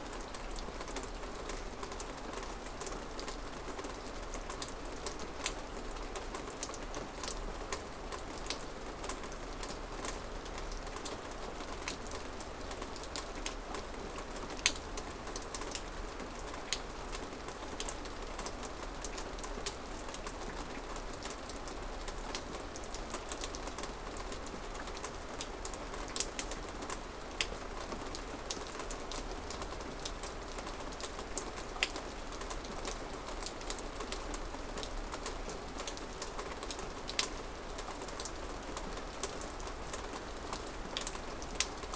King County, WA, USA - Carnation Rain 01
Rain recorded on porch, house in old growth forest.
1 January 2014, 12:00